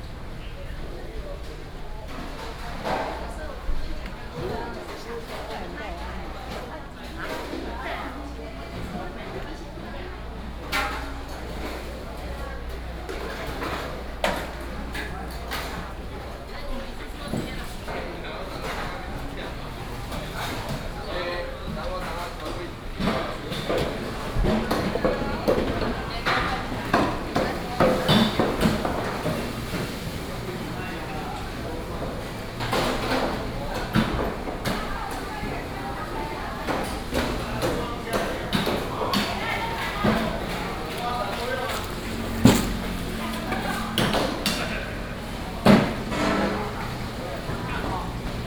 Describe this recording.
walking in the Public retail market, traffic sound, Being sorted out, Cleaning up cleaning, Binaural recordings, Sony PCM D100+ Soundman OKM II